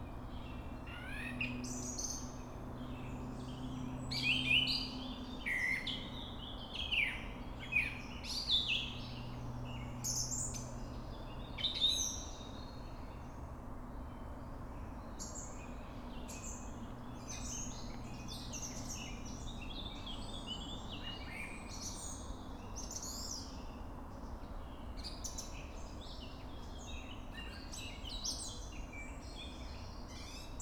Приокский р-н, Нижний Новгород, Нижегородская обл., Россия - birds 1 nn
Nizhnij Novgorod, Nizhegorodskaya oblast', Russia, June 2016